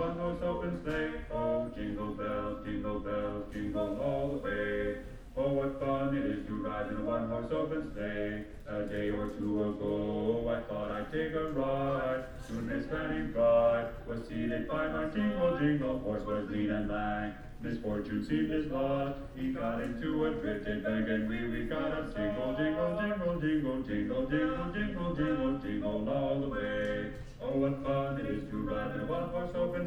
three carol singing reindeer ... and a fire alarm ... animatronic reindeer singing carols greeting customers at the entrance to a store ... then the fire alarm goes off ... lavalier mics clipped to bag ... background noise ... voices ... sliding door ...
England, United Kingdom